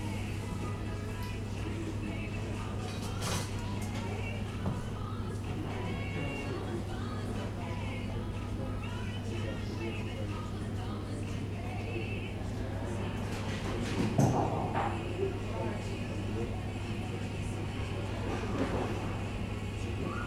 Berlin Tegel airport terminal D
early morning ambience at terminal D, Tegel airport.
Berlin, Germany, 16 April